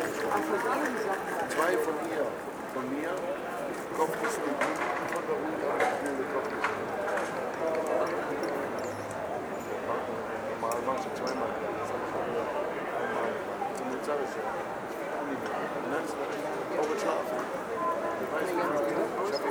flohmarkt, café, gespräche, straßenverkehr, autos
18 April 2010, 13:45